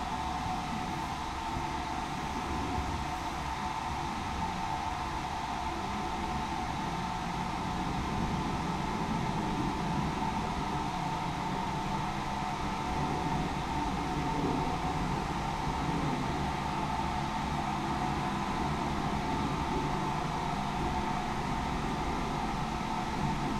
Horseshoe Bridge, Thames Path, Reading, UK - Tibetan Railings, Trains and Gas
This is the second recording session I've had here and this time realised that the railings surrounding the gas pipes had a lovely sonic quality and so 'played them with my knuckle, as life carried on around.... Sony M10 with built in mics.
9 June 2019